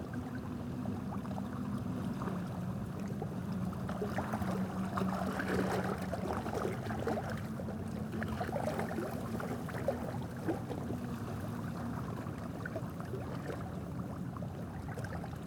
Tannery Lands, Kingston Ontario - Cataraqui River and environs
The Great Cataraqui River, with Kingston's 'singing bridge' in the distance, and rustling grasses. The 'Tannery Lands' are a derelict and poisoned area where there was formerly industry that used nasty chemicals and heavy metals. The ground here is heavily contaminated but it is also an area that nature is reclaiming, and you can easily see osprey, herons, otters, beaver, and many turtles.
Eastern Ontario, Ontario, Canada, November 2019